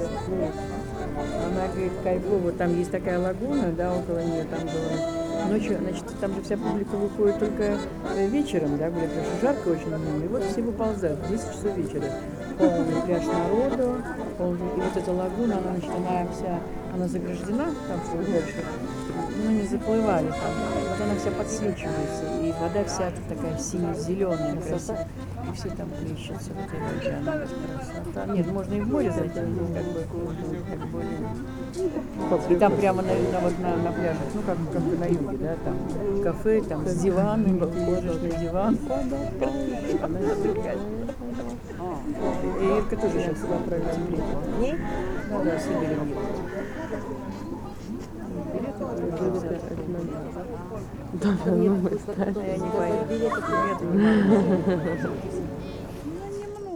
autumn, still there are a lot of people in the central street